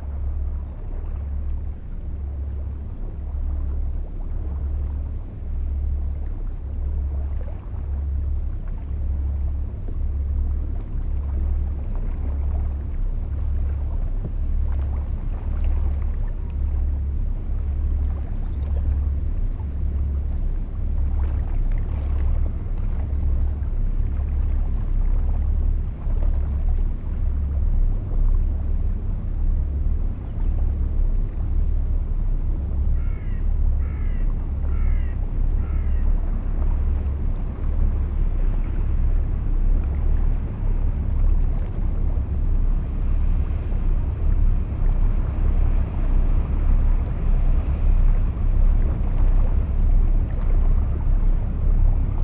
Le Landin, France - Boat
A boat is passing by on the Seine river. On this early morning, this is an industrial boat transporting containers.